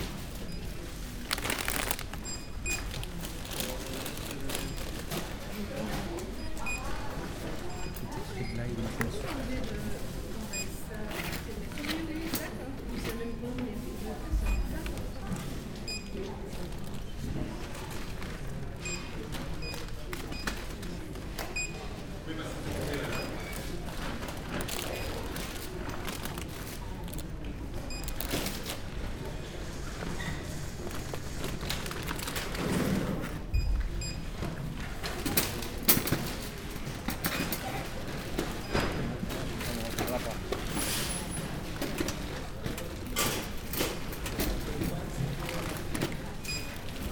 Ottignies-Louvain-la-Neuve, Belgique - In the supermarket
Shopping in the supermarket, on a quiet saturday afternoon.
Ottignies-Louvain-la-Neuve, Belgium